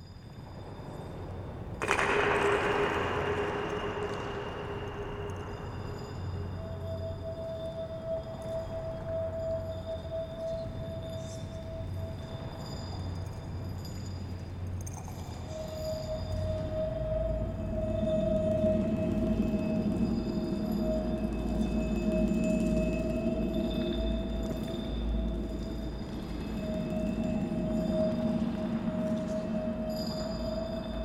open improvisation session at Teufelsberg on a fine winter day with Patrick, Natasha, Dusan, Luisa and John